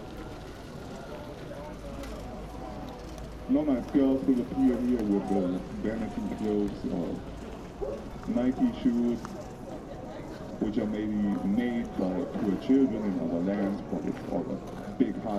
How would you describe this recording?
Great Artist in Gorlitzer Park, playinig a Bouzuki, Kalyuka, Jaw Harp, Microphone and Loop Station. Joining Mr. US aKa Mr. Youth. "What would you do if you were the Pope?"